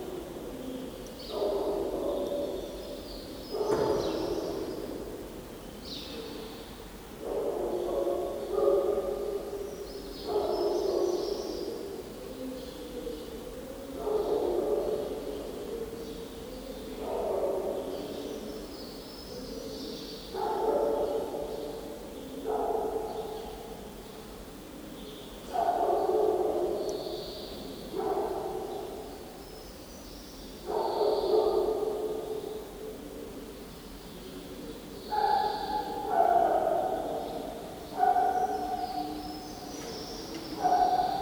place de leglise, nonac, legende de sang
enregistré sur le tournage de legende de sang de Julien Seri